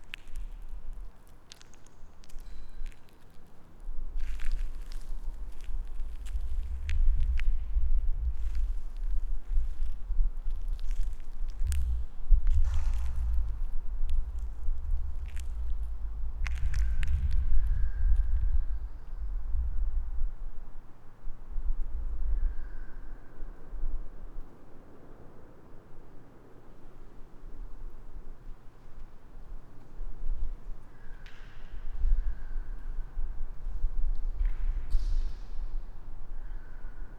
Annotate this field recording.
quiet ambience inside of voluminous hall with decayed rooftop